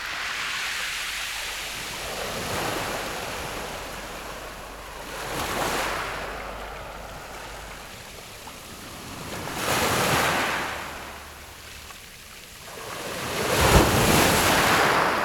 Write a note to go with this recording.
Sound of the waves, At the beach, Zoom H6 MS+ Rode NT4